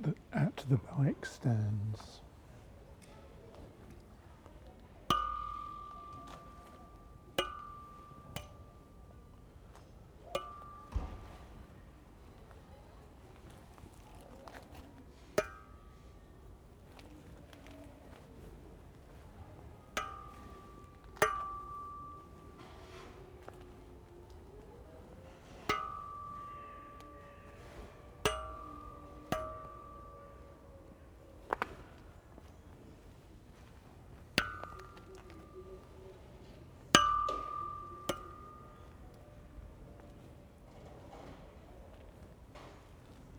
Stallschreiberstraße, Berlin, Germany - Exploring the musical bike stands with the palm of my hand
The cranes on the Google map are no longer there, but these are very new apartments. People are still moving in. The sounds of work inside still continues. The buildings surround a long narrow garden full of exotic plants and areas of different surfaces – gravel, small stones, sand – for walking and for kids to play. Perhaps this is Berlin's most up-to-date Hinterhof. There is 'green' design in all directions, except perhaps underfoot - surely grass would be nicer than so much paving. The many bike stands are all metal that ring beautifully when hit by hand. Together with the resonant railings they are an accidental musical instrument just waiting to be played.